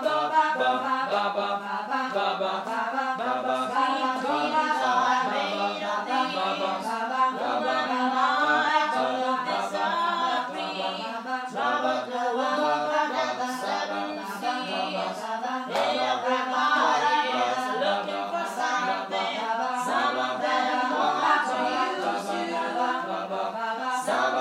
{"title": "Sentina, San Benedetto del Tronto AP, Italia - overjazz people", "date": "2011-11-27 12:54:00", "description": "prove per ''sweet dreams''", "latitude": "42.92", "longitude": "13.89", "altitude": "6", "timezone": "Europe/Rome"}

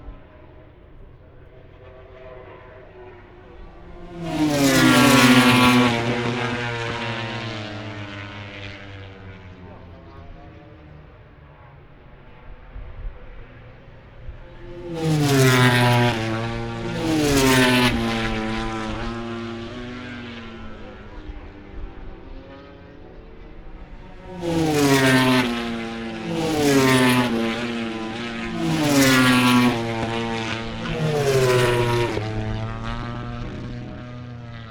{"title": "Silverstone Circuit, Towcester, UK - british motorcycle grand prix 2021 ... moto grand prix ...", "date": "2021-08-28 13:30:00", "description": "moto grand prix free practice four ... wellington straight ... olympus ls 14 integral mics ...", "latitude": "52.08", "longitude": "-1.02", "altitude": "157", "timezone": "Europe/London"}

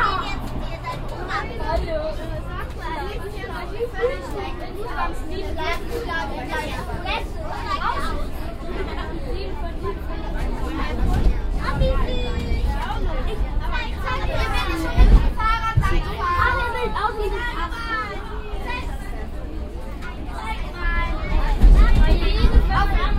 {"title": "cologne, strassenbahnfahrt, nächster halt merheim", "date": "2008-06-02 18:39:00", "description": "soundmap: köln/ nrw\nstrassenbahnfahrt morgens mit der linie 1 - flehbachstr richtung merheim, in der bahn schulkinder auf ausflug\nproject: social ambiences/ listen to the people - in & outdoor nearfield recordings", "latitude": "50.95", "longitude": "7.07", "altitude": "54", "timezone": "Europe/Berlin"}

